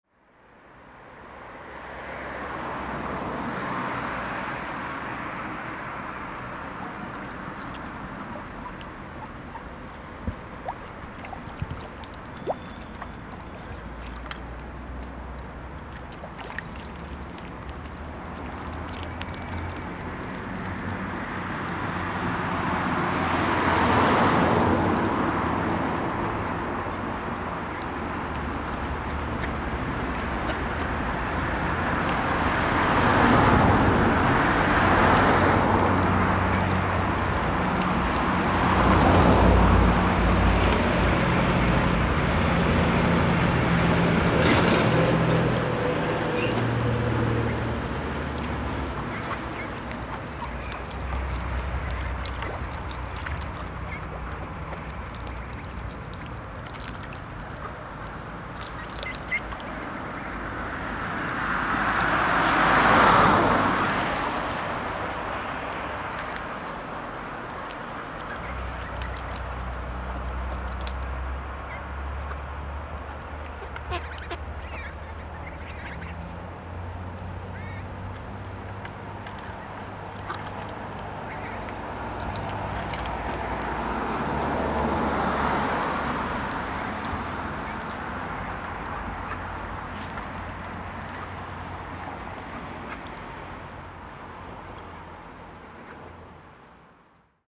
Morlaix, France - Ducks
Ducks eating in the water, some cars, Zoom H6
12 August 2016